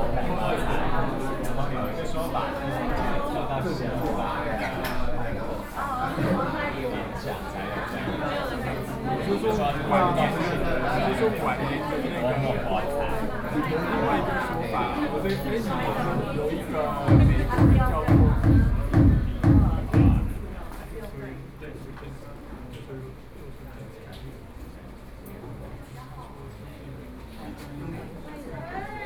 Exhibition OpeningㄝSony PCM D50 + Soundman OKM II
29 June 2013, ~21:00